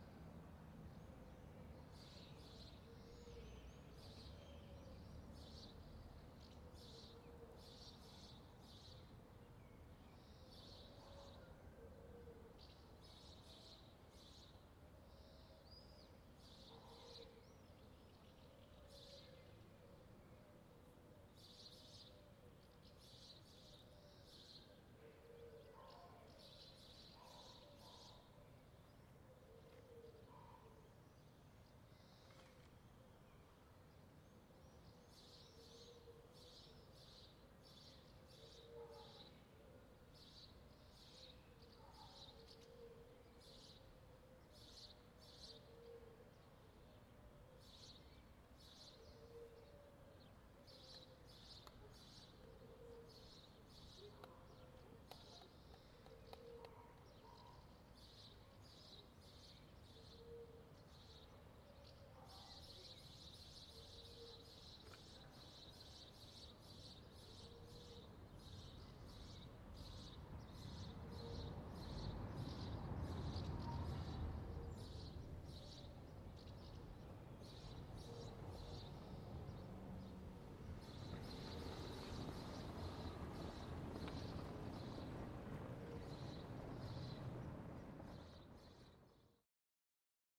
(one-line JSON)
{"title": "Αντίκα, Ξάνθη, Ελλάδα - Metropolitan Square/ Πλατεία Μητρόπολης- 10:45", "date": "2020-05-12 10:45:00", "description": "Quiet ambience, birds singing, car passing by.", "latitude": "41.14", "longitude": "24.89", "altitude": "95", "timezone": "Europe/Athens"}